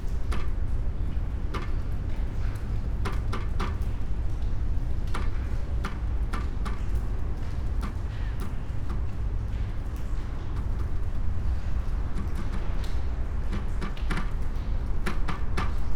Punto Franco Nord, house, Trieste, Italy - typewriter
sounds of the typewriter, covered with sea salt, rust, lying on the raw stony floor, the only object there, on the ground floor of abandoned house number 25, Trieste old harbor ambience ...